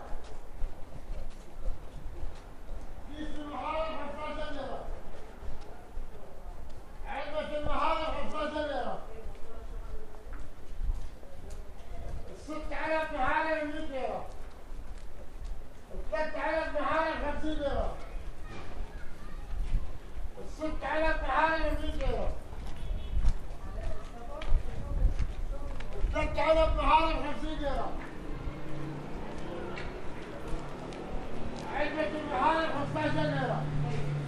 {
  "title": ":jaramanah: :street vendor IV: - six",
  "date": "2008-10-20 12:47:00",
  "latitude": "33.49",
  "longitude": "36.33",
  "altitude": "675",
  "timezone": "Asia/Damascus"
}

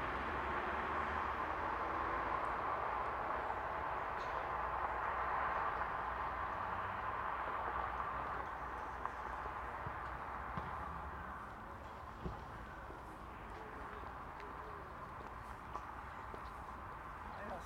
{
  "title": "Karlovac, Birds, Cars, Walking, Sport",
  "date": "2010-10-10 18:29:00",
  "latitude": "45.49",
  "longitude": "15.56",
  "altitude": "109",
  "timezone": "Europe/Zagreb"
}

